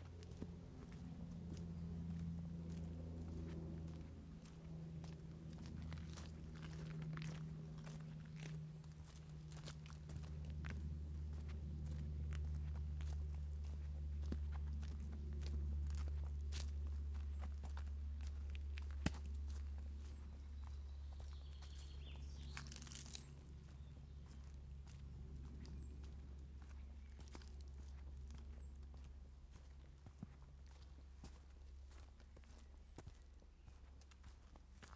I took a walk in the Pendarves Woods and decided to record part of my journey. I used DPA4060 microphones and a Tascam DR100.
10 June, 16:00